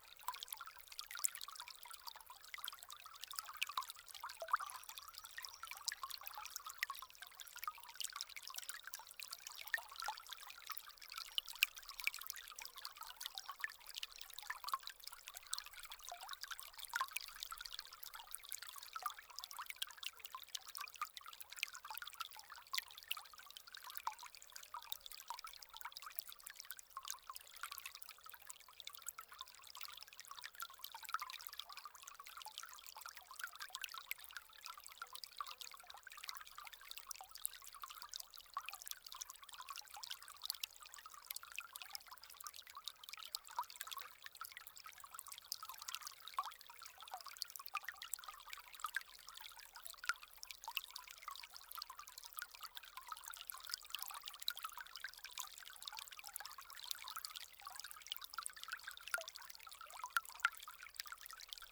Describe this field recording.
The Loire river is a well known place, considering that there's a lot of touristical places : old castles, the beautiful weather and the overall beauty of its natural sites. This makes a good presage for a soundscape. However this recording was difficult to achieve. Indeed, on the Tours city outskirts, Loire river is extremely quiet, it's a lake without waves. In addition, important roads border the banks. Because of the cars, to record near an island is almost mandatory. Luckily, I was able to find the perfect place in Saint-Genouph village : beautiful, calm and representative of the river. La Loire est un fleuve très connu du grand public, étant donné les symboles qu'il véhicule : la présence des châteaux, le beau temps, la beauté générale de ses sites naturels. Cela fait de jolis atouts en vue de la constitution d'un paysage sonore. Pourtant cet enregistrement s'est avéré difficile à réaliser. En effet aux abords de Tours, la Loire est extrêmement calme.